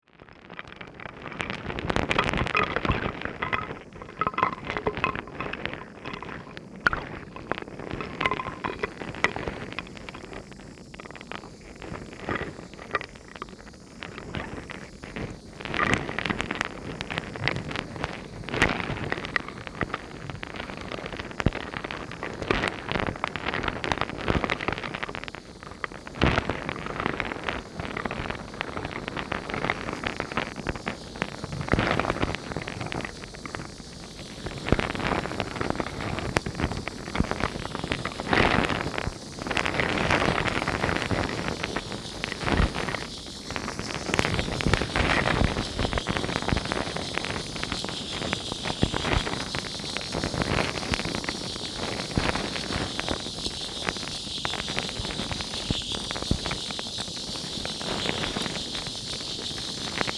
waves and boat - hydrophone recording
NY, USA, 9 January 2012